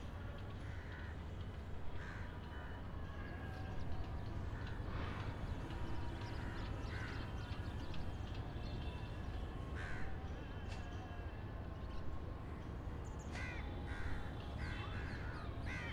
Khirki, New Delhi, Delhi, India - General ambience around the old mosque 1
General city ambiance recorded from the flat roof of the very interesting old mosque in Delhi.